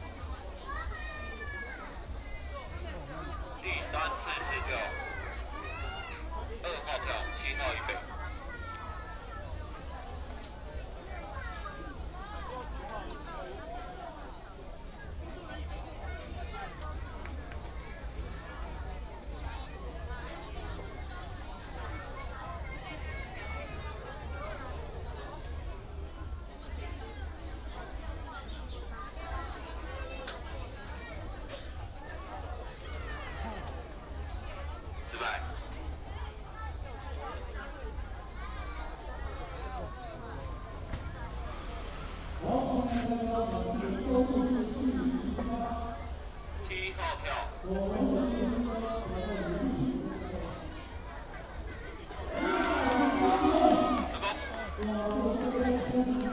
School and community residents sports competition